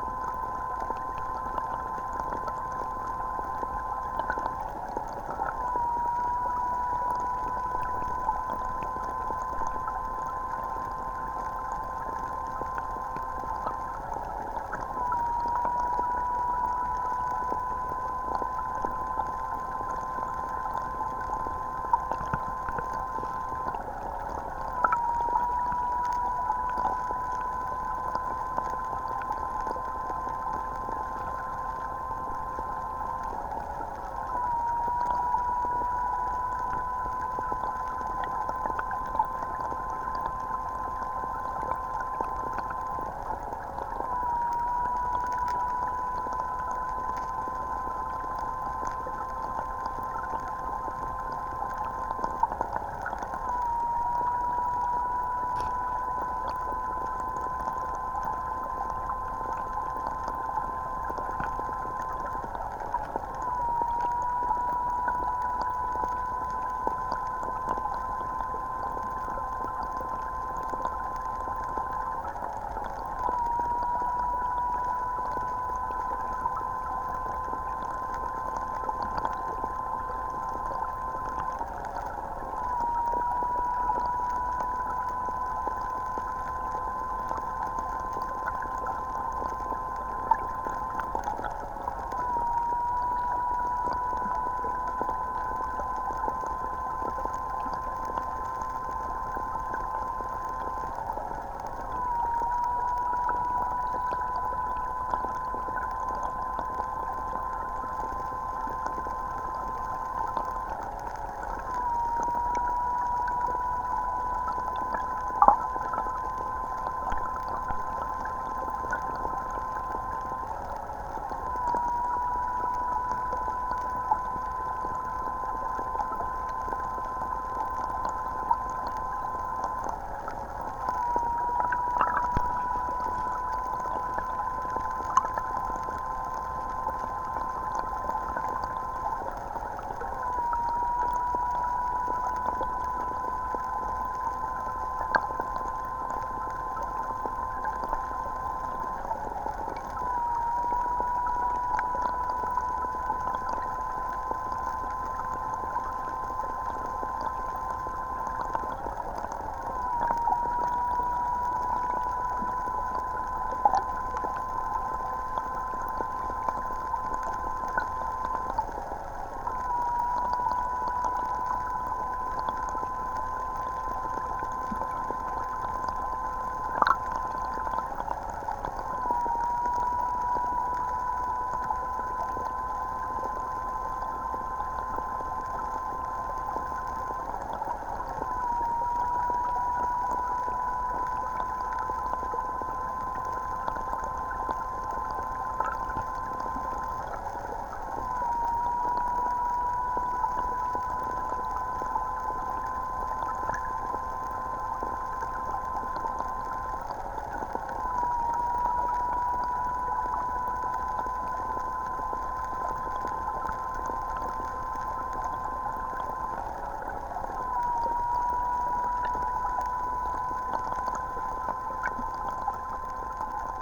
Inkūnai, Lithuania, singing spring
Cold clear water spring. When you put a hydrophone in it, you hear strange singing sound
11 August, ~13:00